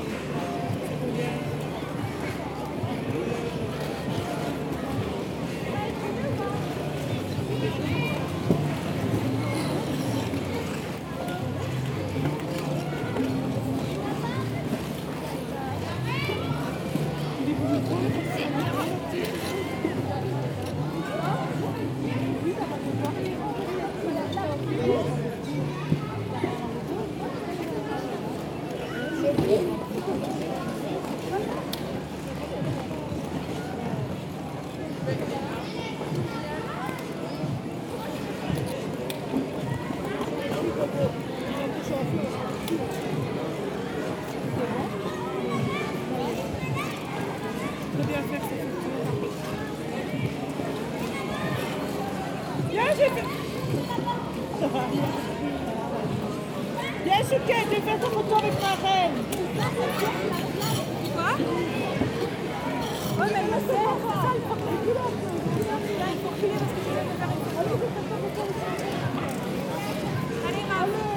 Charleroi, Belgique - Winter games
On a completely renewed square, there's a rink. Recording of the young people playing. After I made a walk into the shopping mall. Santa Claus is doing selfies with babies.
Charleroi, Belgium, 15 December